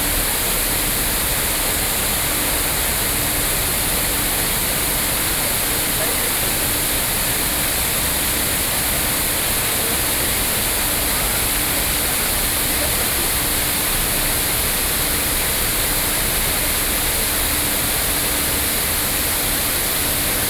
Taipei, Taiwan - Man-made waterfall
Wanhua District, Taipei City, Taiwan, 3 November 2012